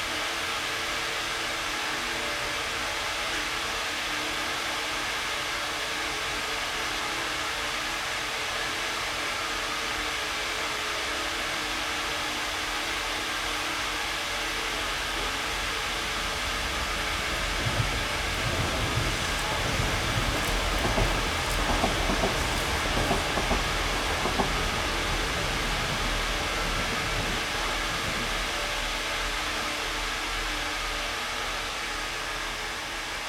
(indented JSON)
{
  "title": "Reading, UK - Gaswork Pipes Kennet Canalside",
  "date": "2017-04-04 15:43:00",
  "description": "I've walked past these pipes dozens of times and have enjoyed their singing, with the addition of the odd train and sounds from the birds and people on the canal itself. Sony M10 Rode VideoMicProX.",
  "latitude": "51.46",
  "longitude": "-0.95",
  "altitude": "39",
  "timezone": "Europe/London"
}